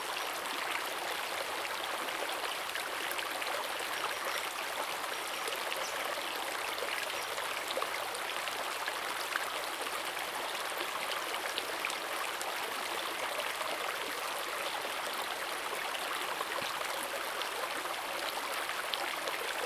{"title": "種瓜坑溪, 埔里鎮成功里 - Stream sound", "date": "2016-04-20 15:12:00", "description": "Brook, Stream sound\nZoom H2n MS+XY", "latitude": "23.96", "longitude": "120.89", "altitude": "469", "timezone": "Asia/Taipei"}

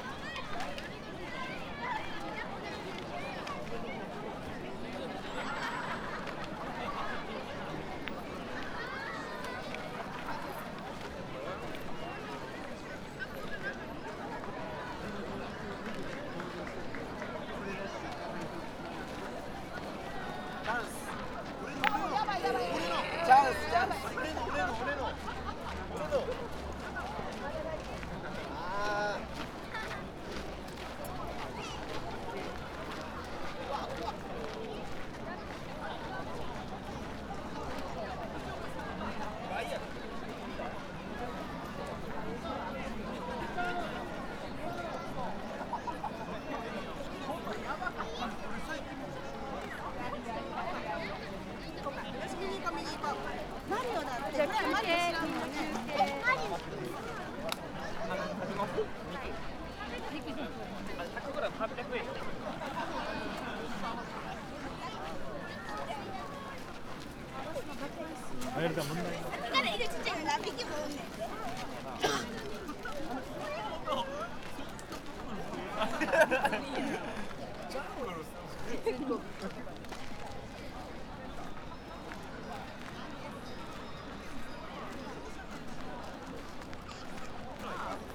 {"title": "Osaka, Utsubohonmachi district, Utsobo park - Sunday picnic", "date": "2013-03-31 15:30:00", "description": "city dwellers having a picnic, playing games, talking, barbecuing, cheerful atmosphere.", "latitude": "34.69", "longitude": "135.50", "altitude": "11", "timezone": "Asia/Tokyo"}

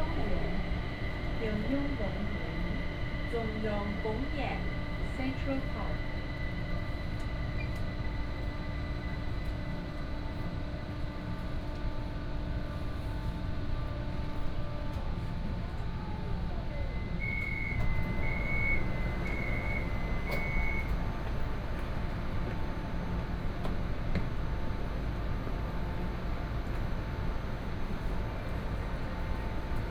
{"title": "苓雅區, Kaohsiung City - Red Line (KMRT)", "date": "2014-05-14 07:56:00", "description": "from Formosa Boulevard station to Sanduo Shopping District station", "latitude": "22.62", "longitude": "120.30", "altitude": "8", "timezone": "Asia/Taipei"}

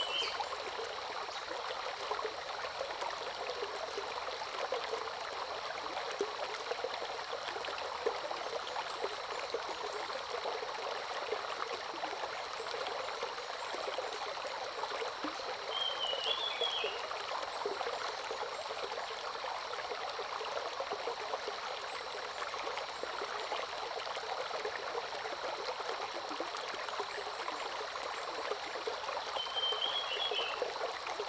{"title": "中路坑溪, Puli Township - Bird and Stream", "date": "2015-06-11 05:37:00", "description": "Early morning, Bird calls, Brook\nZoom H2n MS+XY", "latitude": "23.94", "longitude": "120.92", "altitude": "492", "timezone": "Asia/Taipei"}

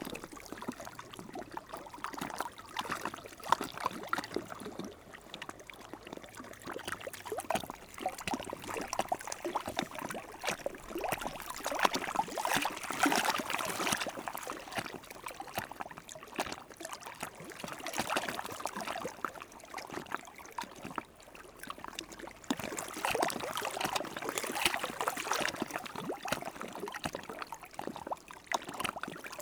{"title": "Saint-Pierre-de-Manneville, France - High tide", "date": "2016-09-18 15:00:00", "description": "The Seine river during the high tide. The river is going to the wrong way, towards Paris. During the low tide, there's a reversion.", "latitude": "49.41", "longitude": "0.93", "timezone": "Europe/Paris"}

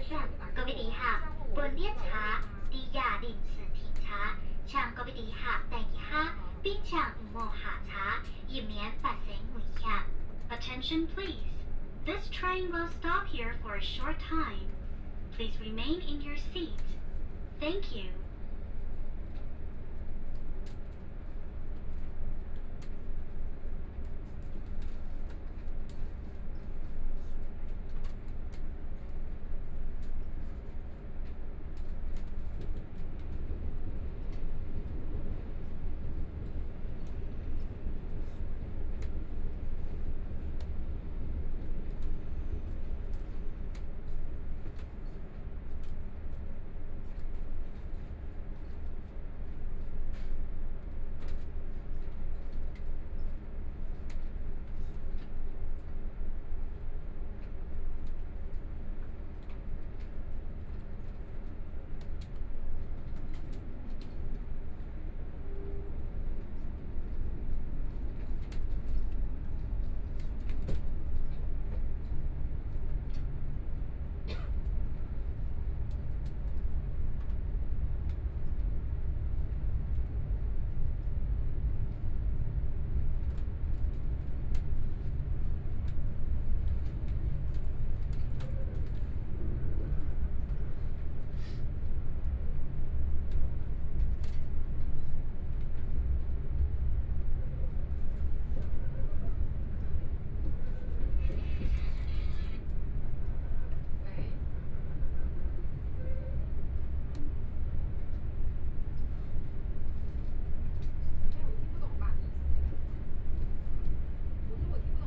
{"title": "Shulin District - Chu-Kuang Express", "date": "2013-09-11 12:54:00", "description": "from Yingge Station to Shulin Station, Zoom H4n + Soundman OKM II", "latitude": "24.97", "longitude": "121.39", "altitude": "43", "timezone": "Asia/Taipei"}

